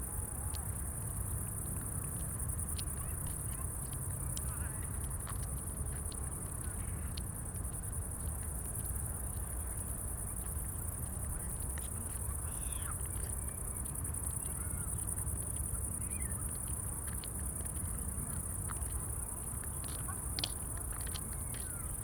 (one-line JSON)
{"title": "Tempelhofer Feld, Berlin - bird sanctuary, crickets", "date": "2012-07-08 20:35:00", "description": "crickets in the meadows, beetween the two runways, the area has been a bird sanctuary for a while, even when the airport was in operation. nowadays, people seem to respect it.\n(SD702 DPA4060)", "latitude": "52.47", "longitude": "13.41", "altitude": "43", "timezone": "Europe/Berlin"}